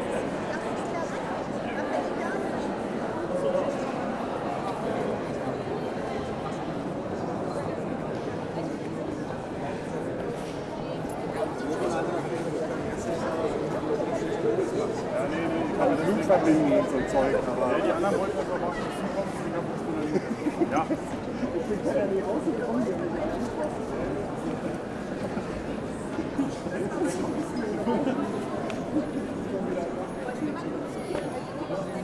berlin, zossener straße: heilig-kreuz-kirche - the city, the country & me: holy cross church
inside the holy cross church during the carnival of cultures
the city, the country & me: june 12, 2011
Berlin, Germany, 12 June 2011